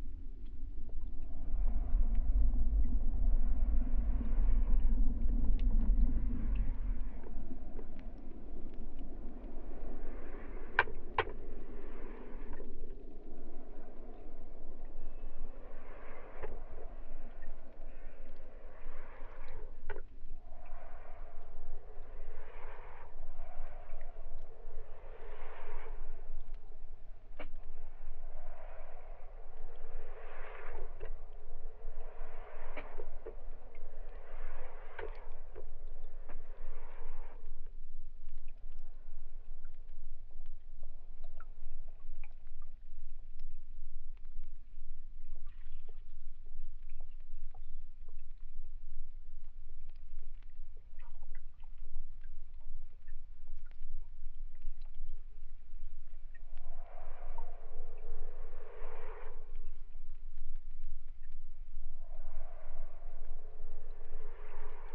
Laan van Meerdervoort, Den Haag - hydrophone rec from the bridge
Mic/Recorder: Aquarian H2A / Fostex FR-2LE
The Hague, The Netherlands, April 2009